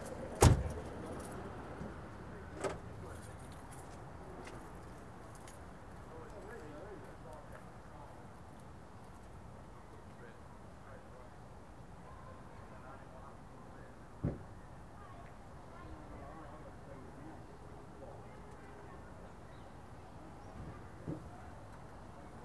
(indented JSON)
{
  "title": "Ashby-de-la-Zouch, Leicestershire, UK - Car Park Ambience",
  "date": "2013-07-11 11:15:00",
  "description": "Hand held Zoom H4n recorded in public car park with school party passing through.\nVery minimal editing to remove a couple of clicks, normalised to -3Db.",
  "latitude": "52.75",
  "longitude": "-1.48",
  "altitude": "129",
  "timezone": "Europe/London"
}